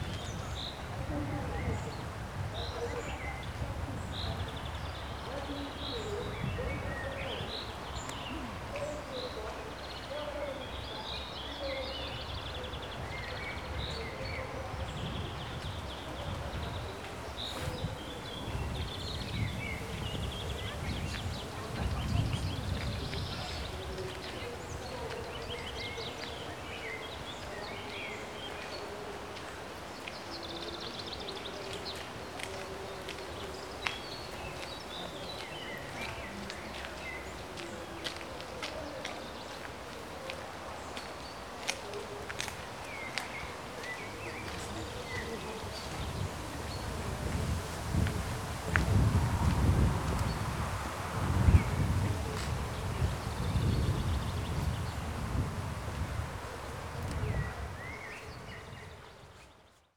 Kornik, arboretum at the castle - at the path through arboretum
bells from a nearby church. a faint shreds of megaphone voice carried by the wind from a great distance. birds chirping away.
Kórnik, Poland, 4 May 2014